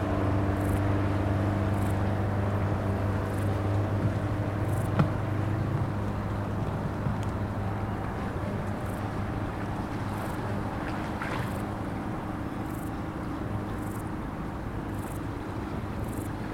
Pont routier de Cressin-Rochefort, Cressin-Rochefort, France - Sous le pont.
Via Rhôna sous le pont de Cressin_Rochefort . insectes, cyclistes passant sur les barrières canadiennes, passage d'un hors-bord .
France métropolitaine, France, July 2021